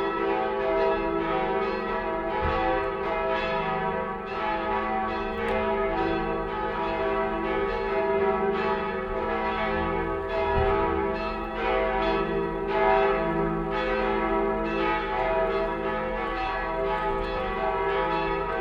Katedralna, Opole, Poland - (43) The cathedral bells
Binaural recording of the cathedral bells in Opole.
recorded with Soundman OKM + Zoom H2n
sound posted by Katarzyna Trzeciak
województwo opolskie, Polska, 13 November 2016, 12:00